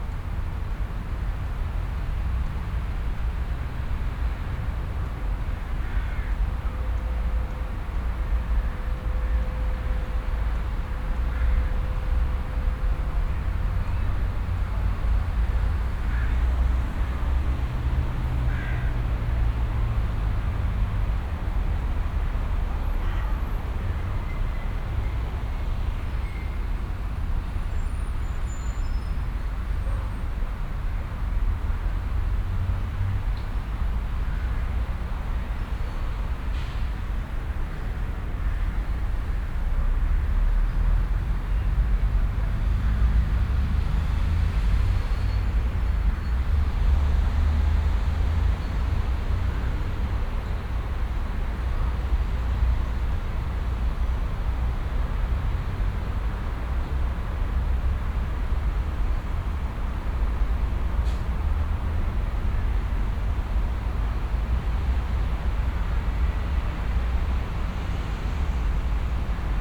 At the temporary sound park exhibition with installation works of students as part of the Fortress Hill project. Here the sound of screams created with the students during the workshop and then arranged for the installation coming out of concrete tube at the park. In the break beween the screams and in the background traffic, birds and city noise.
Soundmap Fortress Hill//: Cetatuia - topographic field recordings, sound art installations and social ambiences